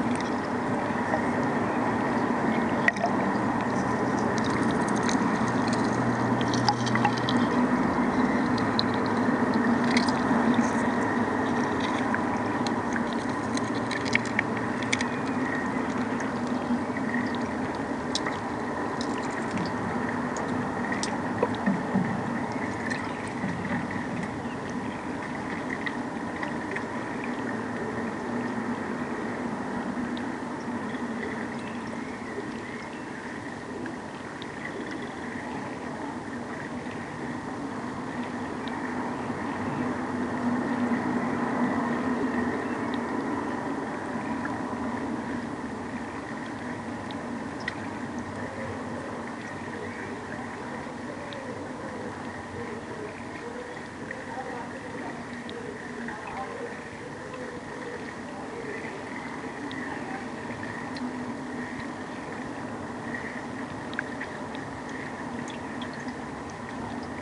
Recorded with a Sound Devices MixPre-3 and a stereo pair of JrF hydrophones.